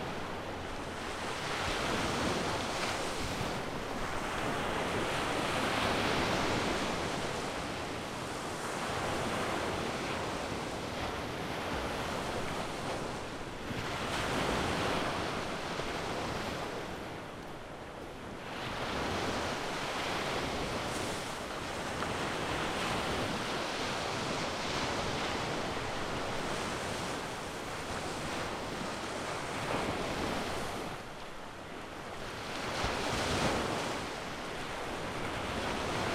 2004.06.08, killiney/seaside, 10.00 morning
Dublin, Ireland